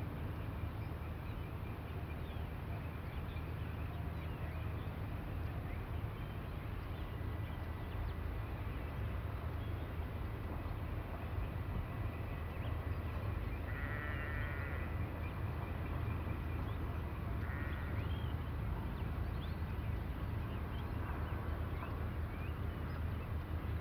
Pocinho, Vila Nova de Foz Côa, Portugal Mapa Sonoro do Rio Douro. Douro River Sound Map
Vila Nova de Foz Côa, Portugal, 21 February 2014, ~17:00